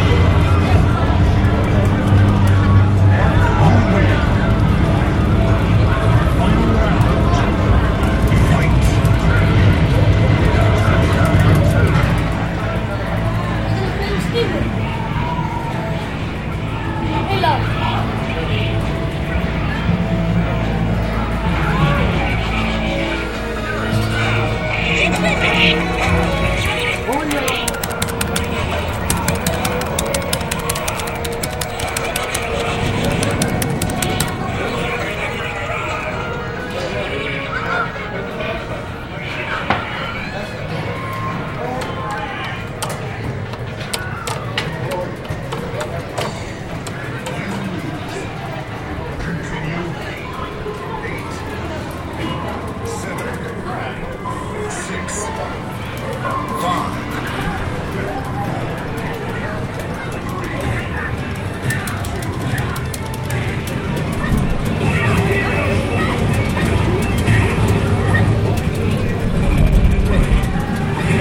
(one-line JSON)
{"title": "297 Queen St, Auckland 1010, New Zealand", "date": "2010-09-28 13:11:00", "description": "A common typical Auckland arcade in New Zealand bustling with the life of children on holiday.", "latitude": "-36.85", "longitude": "174.76", "timezone": "Etc/GMT+12"}